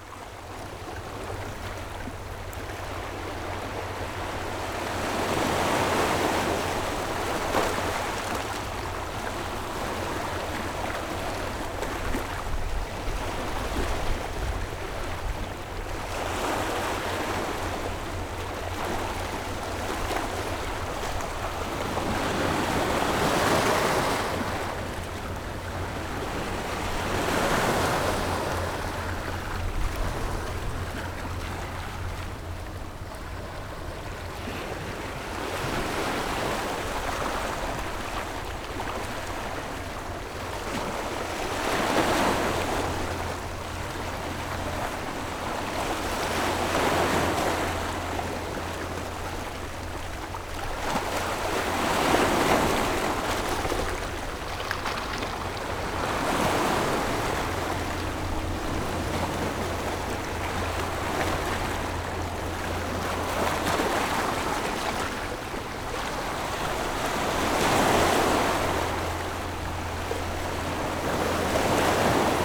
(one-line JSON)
{"title": "Nangan Township, Taiwan - Sound of the waves", "date": "2014-10-14 10:48:00", "description": "At the beach, Sound of the waves, A boat on the sea afar\nZoom H6+ Rode NT4", "latitude": "26.17", "longitude": "119.93", "altitude": "16", "timezone": "Asia/Taipei"}